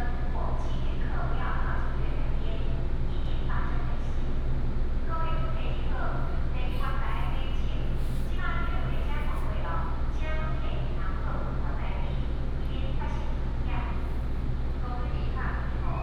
{"title": "Zhunan Station, 苗栗縣竹南鎮 - At the station platform", "date": "2017-01-18 08:57:00", "description": "At the station platform, The train passes by", "latitude": "24.69", "longitude": "120.88", "altitude": "8", "timezone": "Asia/Taipei"}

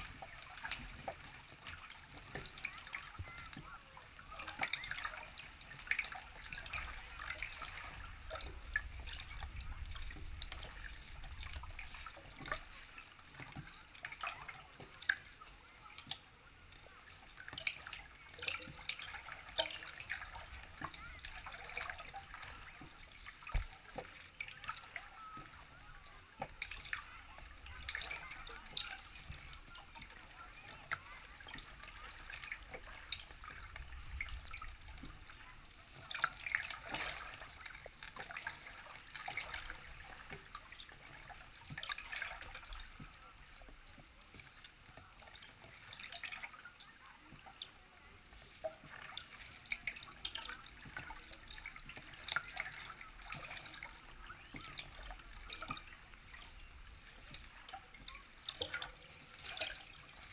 {"title": "Molėtai, Lithuania, lake Bebrusai, abandoned pontoon", "date": "2012-06-30 17:20:00", "description": "contact microphone on abandoned metallic pontoon", "latitude": "55.20", "longitude": "25.47", "timezone": "Europe/Vilnius"}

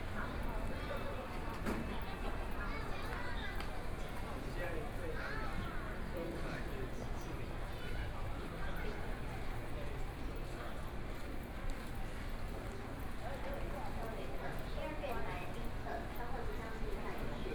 {"title": "Banqiao Station, Taiwan - Walking through the station", "date": "2014-03-01 17:00:00", "description": "Walking through the stationFrom the train station hall, To MRT station platform\nPlease turn up the volume a little\nBinaural recordings, Sony PCM D100 + Soundman OKM II", "latitude": "25.01", "longitude": "121.46", "timezone": "Asia/Taipei"}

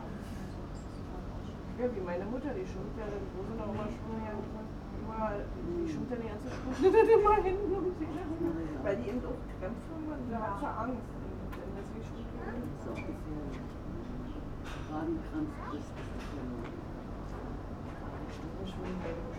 {"title": "Berlin, Stralau - residential area, cafe ambience", "date": "2010-07-25 11:50:00", "description": "Berlin, Stralau, residential area, almost empty, sunday noon, tristesse, little cafe ambience", "latitude": "52.50", "longitude": "13.47", "altitude": "36", "timezone": "Europe/Berlin"}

